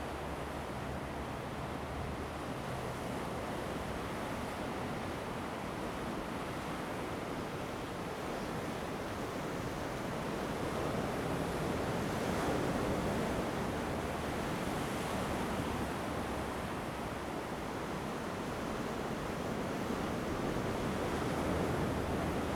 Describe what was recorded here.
sound of the waves, At the seaside, Standing on the rocky shore, Zoom H2n MS+XY